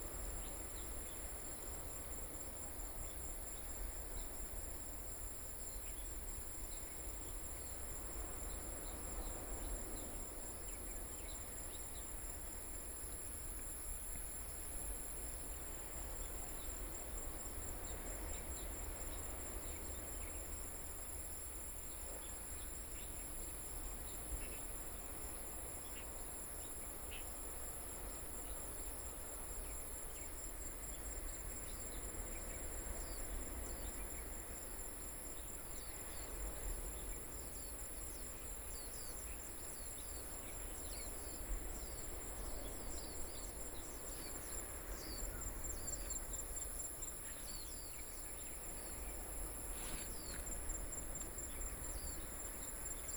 蘇澳鎮存仁里, Yilan County - In the woods
In the Waterfowl Sanctuary, Hot weather, Birdsong sound, Small village, Cicadas sound, Sound of the waves
Suao Township, Yilan County, Taiwan, 28 July 2014